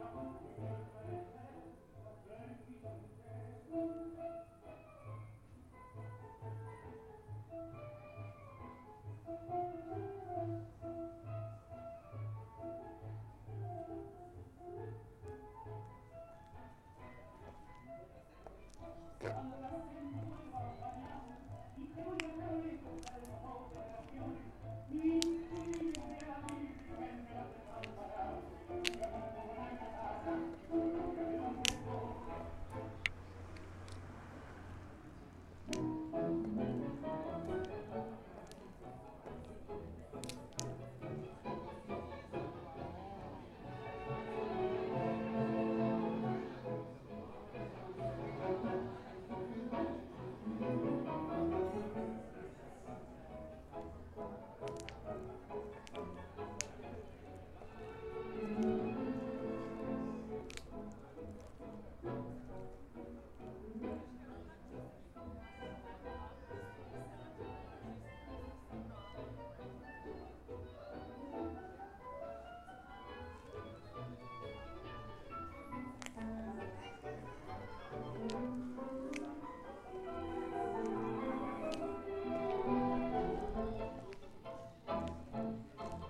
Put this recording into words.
tango, under the window, courtyard